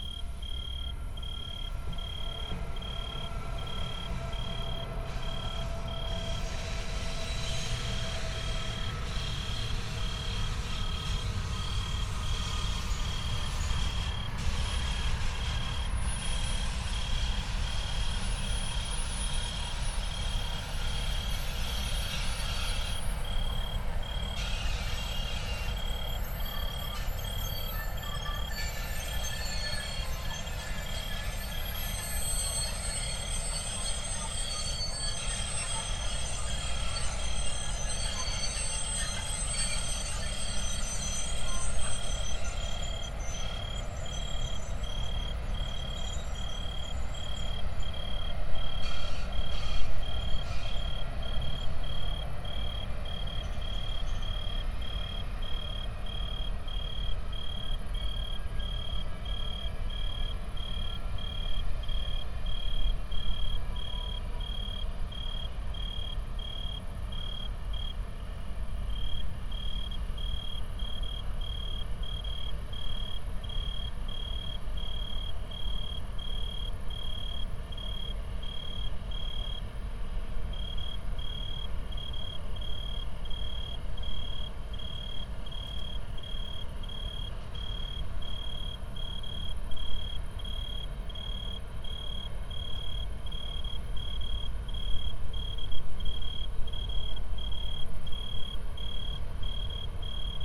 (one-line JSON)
{"title": "Mediapark, Köln - trains and tree crickets", "date": "2019-07-30 21:45:00", "description": "(Tascam iXJ2 / iphone / Primo EM172)", "latitude": "50.95", "longitude": "6.94", "altitude": "51", "timezone": "Europe/Berlin"}